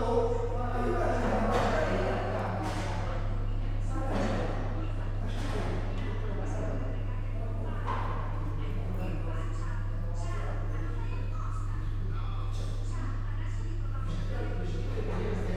two guests talking and playing billiard at St.Mary's Band Club, Qrendi, Malta
(SD702, DPA4060)